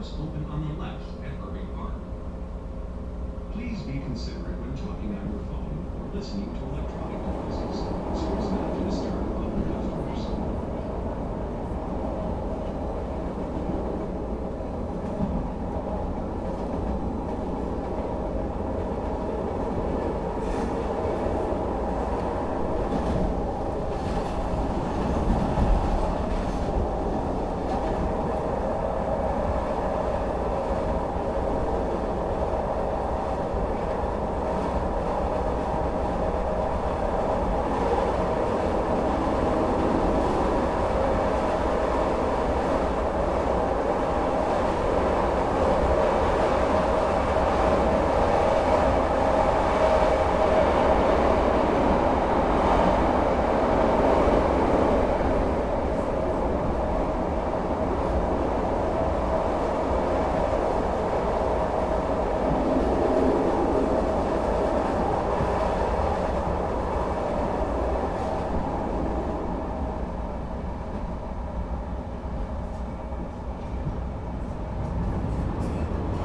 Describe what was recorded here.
Part of my morning commute on a Blue Line train beginning at Jefferson Park CTA station, through Addison station. Each station on this excerpt sits in the meridian of Interstate 90, known locally as the Kennedy Expressway. Tascam DR-40.